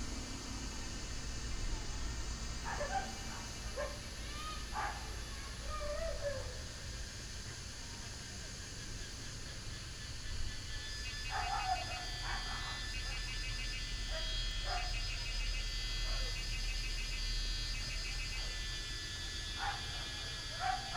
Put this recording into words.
Cicadas sound, Dogs barking, Ecological pool, A small village in the evening